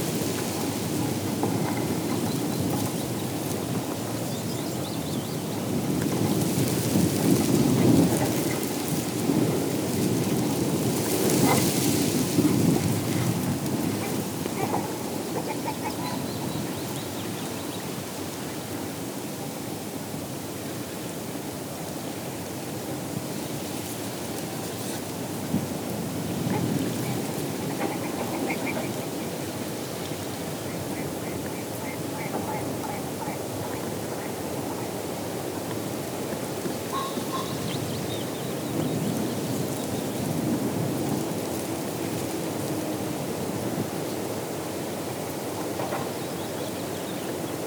long grass blowing in the wind and the odd pheasant